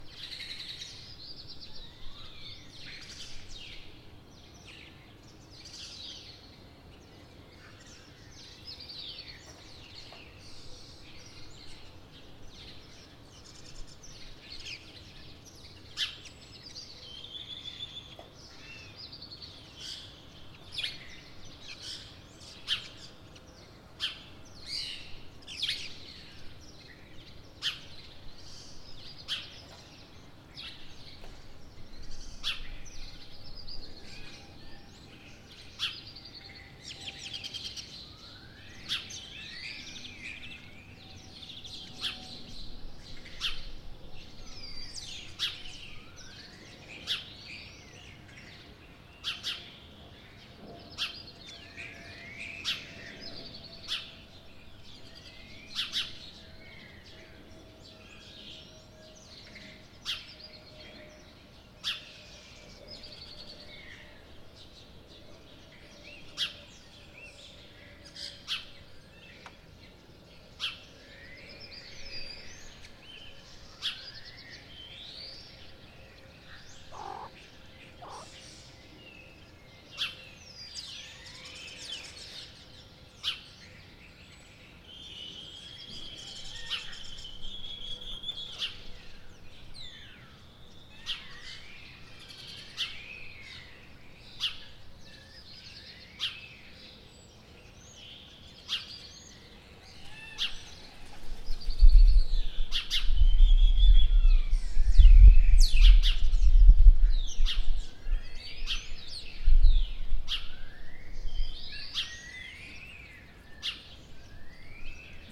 Plaza del Azulejo, Humanes de Madrid, Madrid, España - Festín sonoro de aves
Amaneciendo en Humanes de Madrid, se oyen diferentes especies de gorriones; común, moruno, molinero...también tórtola turca y estornino negro. A lo lejos se oye el rodaje del tractor de una obra y de mas lejos aun el paso de un avión, también en un primer plano aparece el ronroneo de mi gato mirando a todos los pajarillos revolotear cerca, se escucha también el aleteo de un pájaro pasando cerca con el movimiento de las alas, se pueden escuchar sus plumas en movimiento como un rasguido, todo un festín sonoro. Captura de sonido con grabadora ZOOM h1n
Comunidad de Madrid, España, 14 March 2020, 07:20